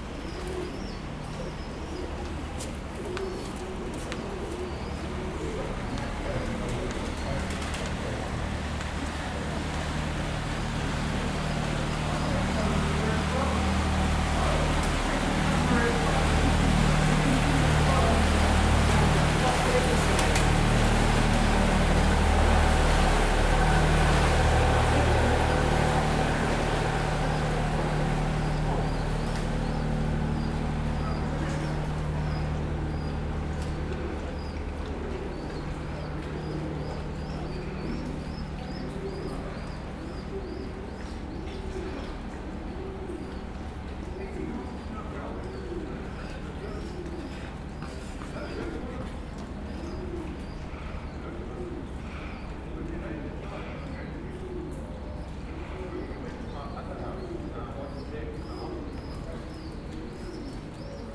The Constitution, St Pancras Way, London, UK - Regent's Canal towpath near Camden
Regent's Canal towpath, underneath the bridge on Royal College Street near Camden, London. The sound of a boat passing, runners, birds, baby pigeons and distant chatting.
England, United Kingdom, 18 January 2022